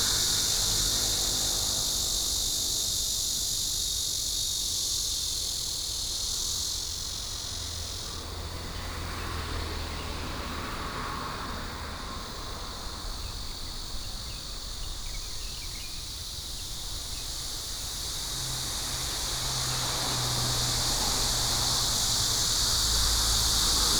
永華機械站, Guangxing Rd., Bade Dist. - Cicadas cry
Cicadas, Traffic sound